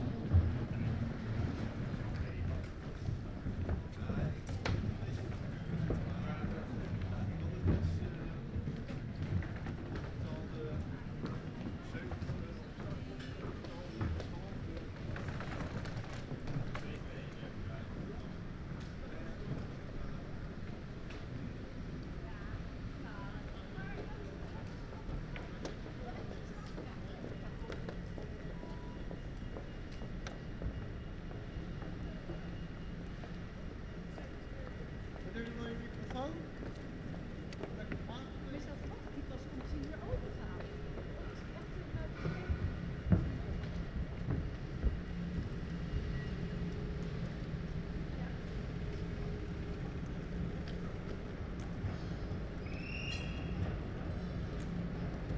Central Station, The Hague, The Netherlands - Commuters
Recorded at the entrance of The Hague Central station with Soundfield st450 microphone.